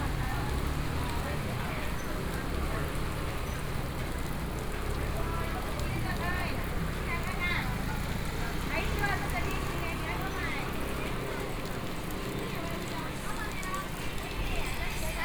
Zhongzheng St., Luodong Township - walking in the Market
Rainy Day, Walking in the traditional market, Zoom H4n+ Soundman OKM II